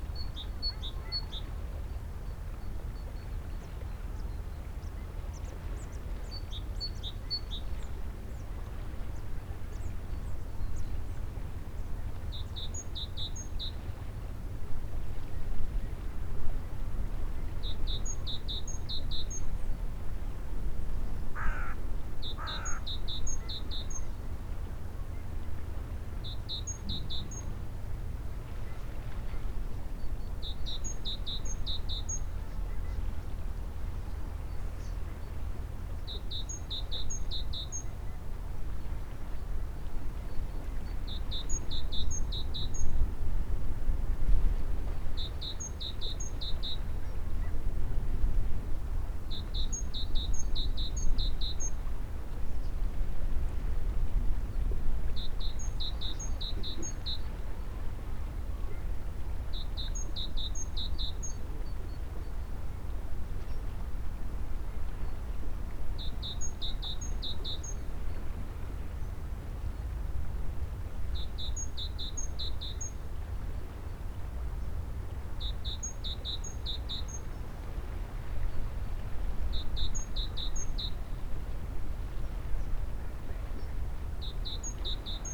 birds and waves
the city, the country & me: march 6, 2013